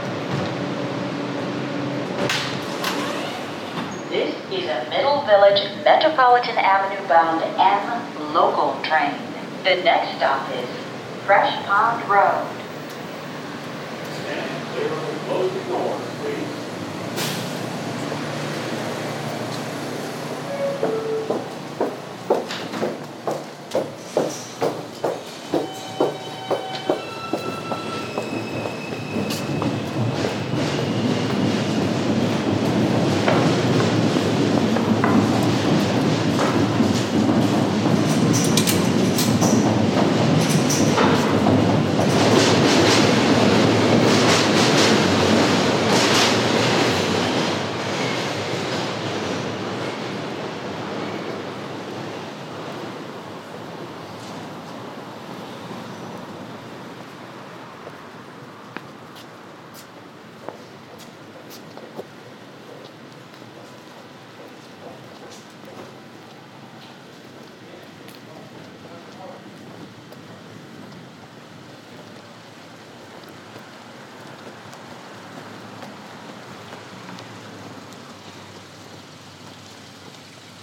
Myrtle Ave, Brooklyn, NY, USA - M Train, windy night
Sounds of wind inside the M train. Train announcements.
Leaving the train at Forest ave.